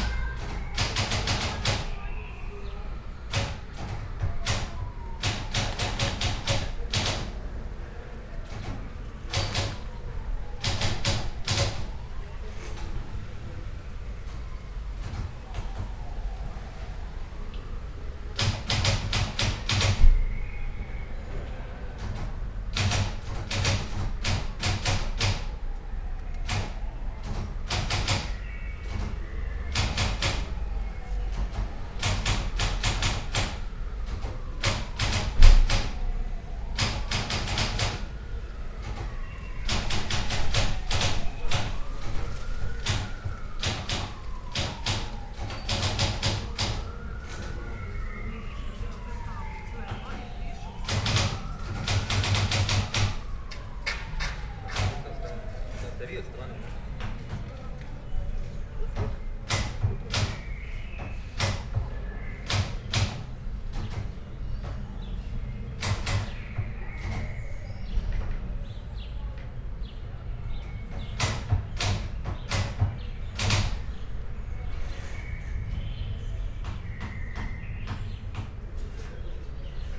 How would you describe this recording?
rewriting 18 textual fragments, written at Karl Liebknecht Straße 11, Berlin, part of ”Sitting by the window, on a white chair. Karl Liebknecht Straße 11, Berlin”, window, wind, typewriter, leaves and tree branches, yard ambiance